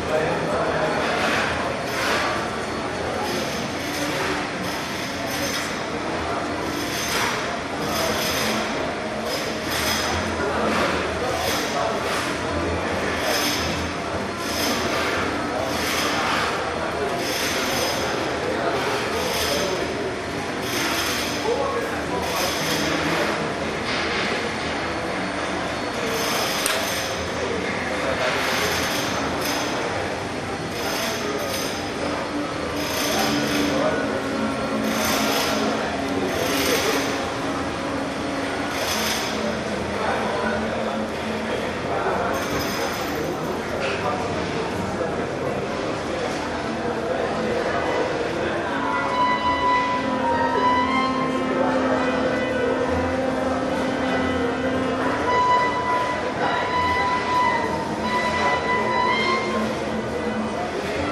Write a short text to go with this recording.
A espera da aula de apreciação musical na Escola Portátil de Música, UNIRIO. Waiting music appreciation class at the Escola Portátil de Música, UNIRIO.